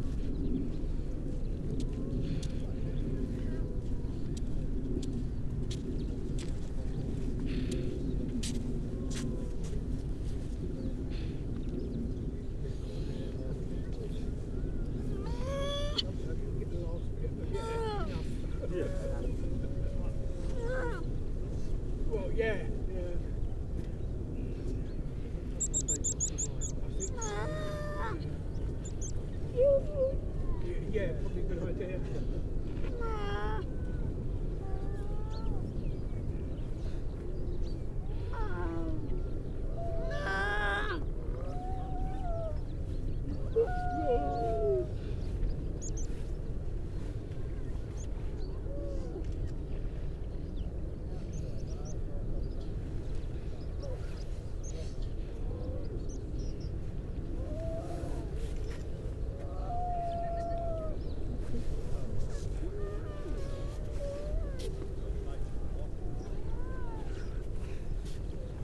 Unnamed Road, Louth, UK - grey seals soundscape ...
grey seals soundscape ... mainly females and pups ... parabolic ... bird calls from ... skylark ... chaffinch ... mipit ... starling ... linnet ... crow ... pied wagtail ... all sorts of background noise ...